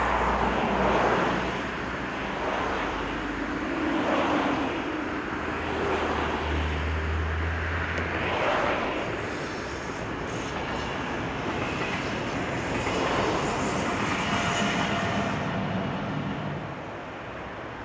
Zürich, Rote Fabrik, Schweiz - Hauptsrasse
Auto, Zug, Stimmen.